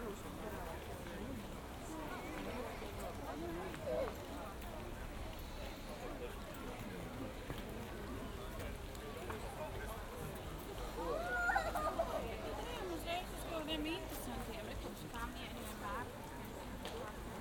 Rīga, Latvia, walk in zoo
walk in zoo. sennheiser ambeo smart headset recording